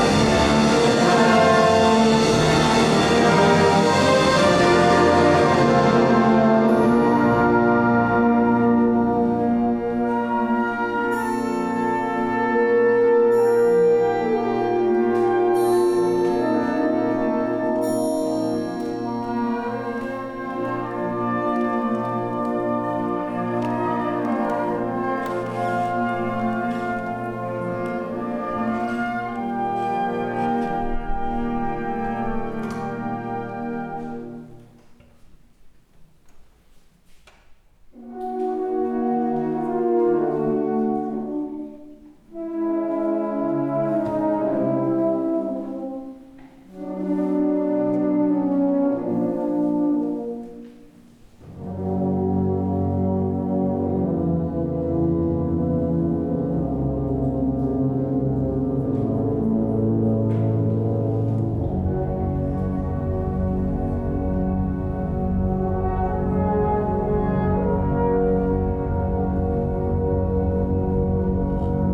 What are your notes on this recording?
Canesianum Blasmusikkapelle Mariahilf/St. Nikolaus, vogelweide, waltherpark, st. Nikolaus, mariahilf, innsbruck, stadtpotentiale 2017, bird lab, mapping waltherpark realities, kulturverein vogelweide